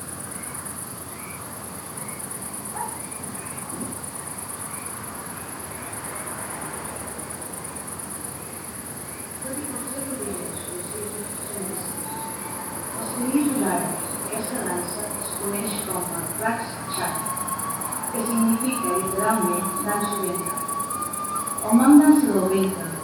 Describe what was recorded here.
Dentro del Casal se celebra esta noche un espectáculo que también llega a escucharse afuera, mezclándose con el ambiente nocturno del campo y el tráfico distante en la carretera.